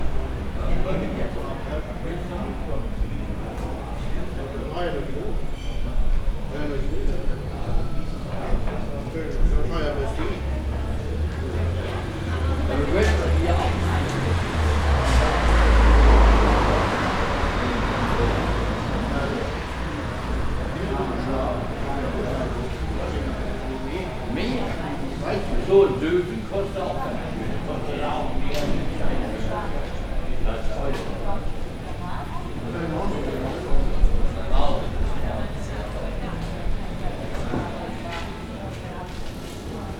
some people chatting, cars passing by